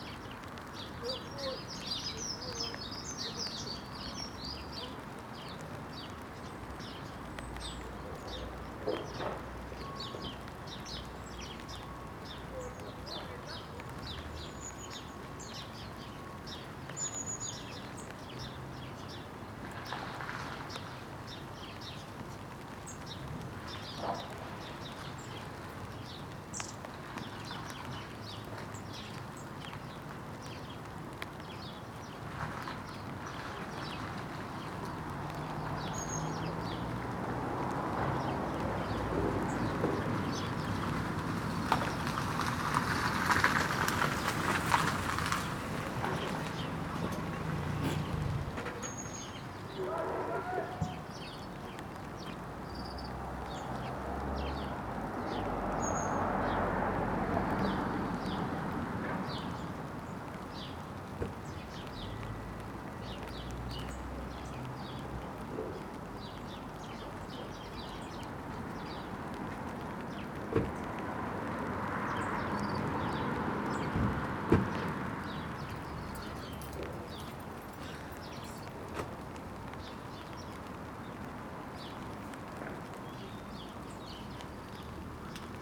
The Poplars High Street Salters Road Elsdon Road Henry Street Hedley Terrace
Tucked into the laurel
as the rain begins
to the chat of sparrows
On the seventh floor
workmen shout
as they hand down planks
On a far skyline
jackdaws dot and shuffle
North East England, England, United Kingdom, 10 March 2021, 09:17